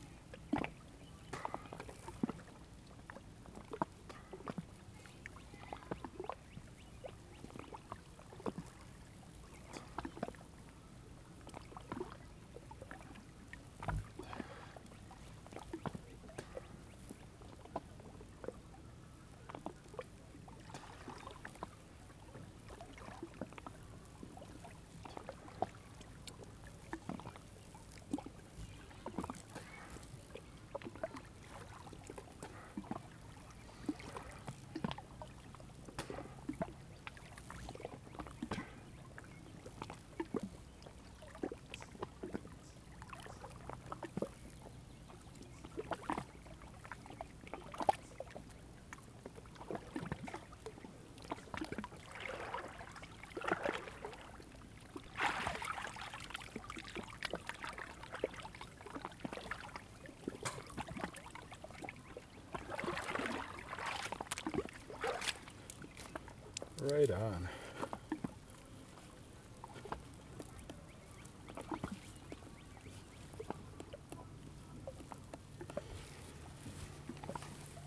Dog Lake, ON, Canada - Ontario cottage country soundscape
Favourite swimming spot on Dog Lake. Light, warm wind. Many dragonflies flitting around. Party music drifting from cottage across the lake. Powerboats. Dive. Swim. Zoom H2n, 120degree stereo.